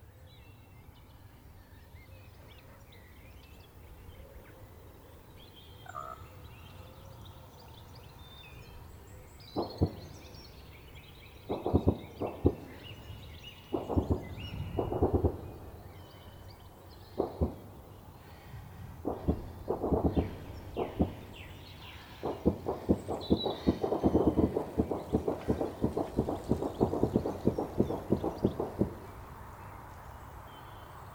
The Purbeck peninsula in Dorset has a lot of Military firing ranges and army practice areas. I visit regularly and always find it disturbing and very incongruous when the roads are closed and live round firing is juxtaposed with the beauty and peace of one of the most beautiful parts of England.
Mix Pre 6 Mk11, Sennheiser 416 and homemade cardioid pair.
South West England, England, United Kingdom, 15 March 2022, 15:22